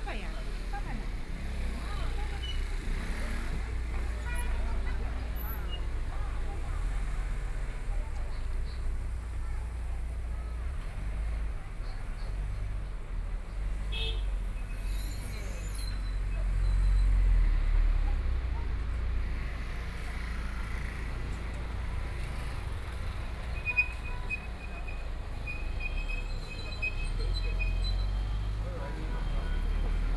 Fangbang Road, Shanghai - Walking through the old neighborhoods
Walking through the old neighborhoods, Market, Fair, The crowd gathered on the street, Voice chat, Traffic Sound, Binaural recording, Zoom H6+ Soundman OKM II